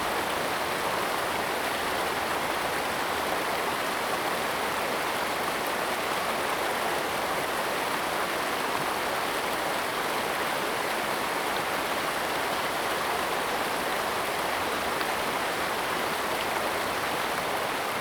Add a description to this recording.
Stream sound, Aircraft flying through, Traffic Sound, Zoom H2n MS+XY + H6 XY